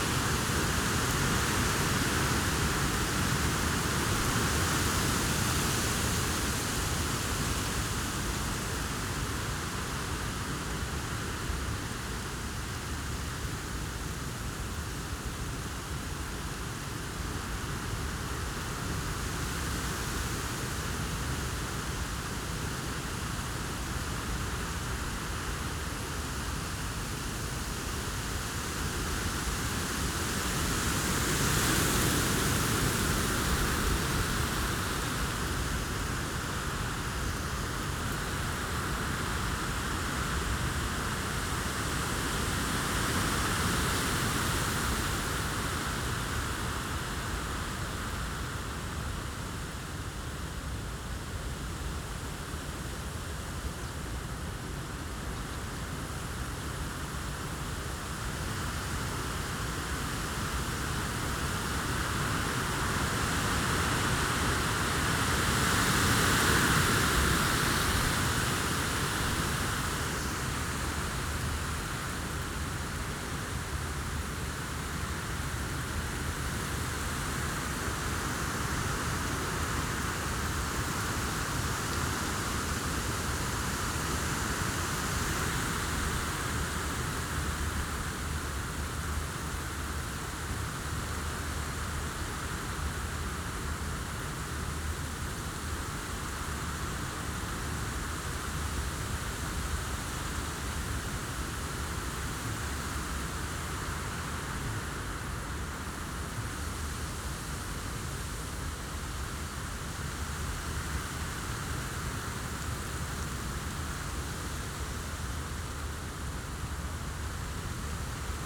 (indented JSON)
{
  "title": "Tempelhofer Feld, Berlin, Deutschland - summer evening wind",
  "date": "2014-07-11 19:10:00",
  "description": "nice and sometimes strong summer evening breeze\n(Sony PCM D50, DPA4060)",
  "latitude": "52.48",
  "longitude": "13.40",
  "altitude": "42",
  "timezone": "Europe/Berlin"
}